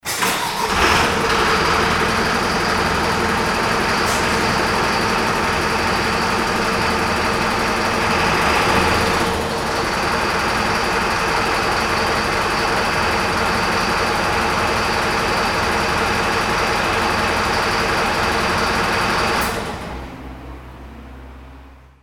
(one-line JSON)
{"title": "hosingen, centre d'intervention, signals and alarm sounds - hosingen, centre dintervention, fire truck engine start", "date": "2011-09-13 11:56:00", "description": "The sound of the start of the fire truck engine inside the buildings wagon garage.\nHosingen, Einsatzzentrum, Maschinenstart des Feuerwehrautos\nDas Geräusch vom Starten des Feuerwehrautos in der Garage mit den Einsatzwagen.\nHosingen, centre d'intervention, démarrage d'un camion d'intervention\nLe bruit du démarrage d’un camion d’intervention dans le garage.", "latitude": "50.01", "longitude": "6.09", "altitude": "500", "timezone": "Europe/Luxembourg"}